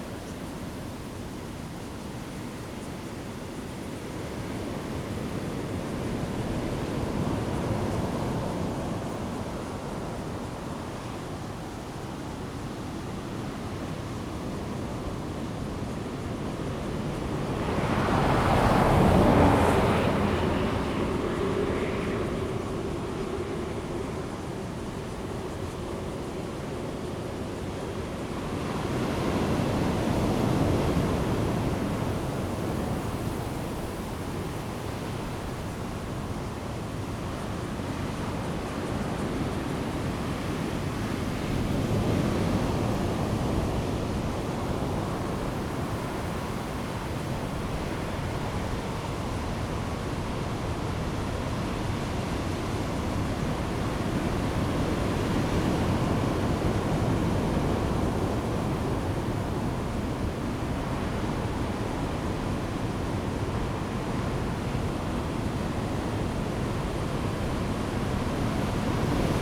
Changbin Township, Taitung County - Great wind and waves
sound of the waves, Great wind and waves
Zoom H2n MS+XY
Taitung County, Changbin Township, 花東海岸公路, 9 October 2014, ~10:00